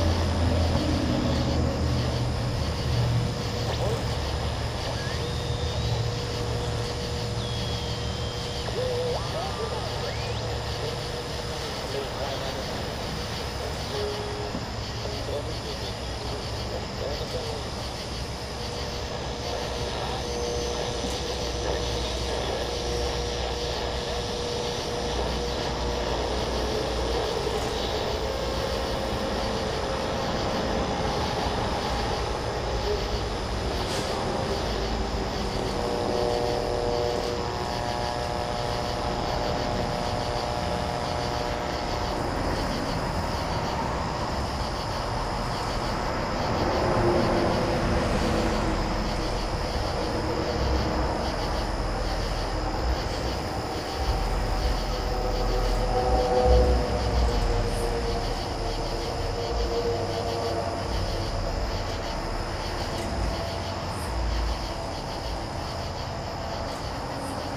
W Arthur Hart St, Fayetteville, AR, USA - Late-night AM and Open Window (WLD2018)
A brief survey of the AM band with the bedroom window open in Fayetteville, Arkansas. A GE clock radio (Model No. 7-4612A) is tuned from 540 to 1600 kHz. Also traffic from Highway 71/Interstate 49, about 200 feet away, and cicadas. For World Listening Day 2018. Recorded via Olympus LS-10 with built-in stereo mics.